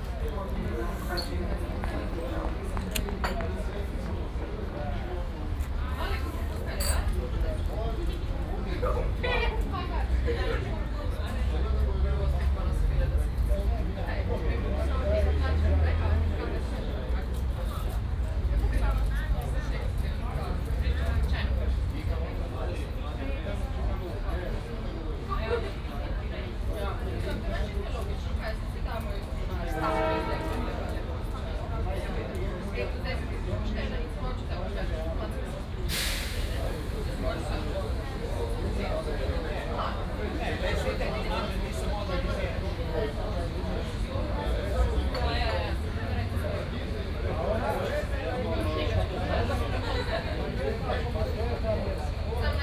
Ljubljana main station - cafe ambience
coffee break a Ljubljana main station
(Sony PCM D50, OKMII)